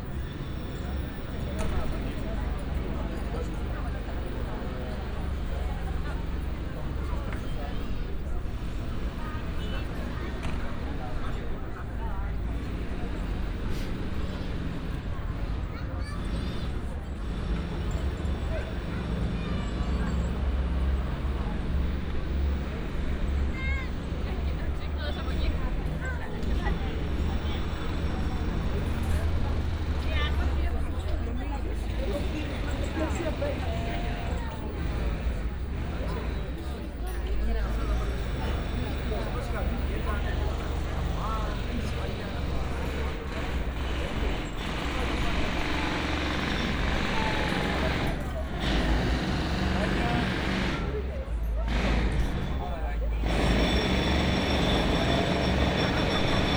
Athens, Acropolis Museum - restaurant terrace
(binaural) going outside through the restaurant on the terrace and back inside. quite a few visitors having meals/coffee. place is busy. some heavy construction near the museum. (sony d50 + luhd pm01bin)